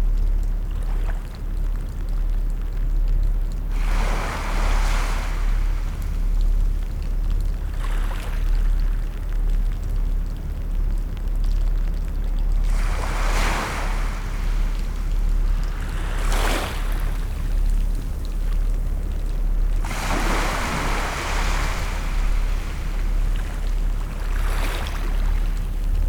wavelets on a landing ramp ... lavalier mics clipped to baseball cap ... background noise ... traffic ... boats ... rain ... bird call from oystercatcher ... lesser black-backed gull ... herring gull ... golden plover ... redshank ...